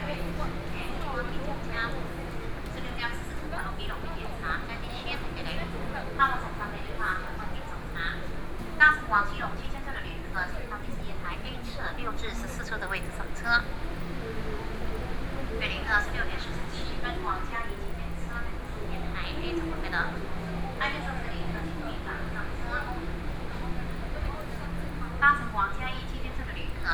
Taipei Main Station, Taiwan - Soundwalk
walking in the Platform, From the train station to MRT, Zoom H4n+ Soundman OKM II
中正區 (Zhongzheng), 台北市 (Taipei City), 中華民國, 16 September 2013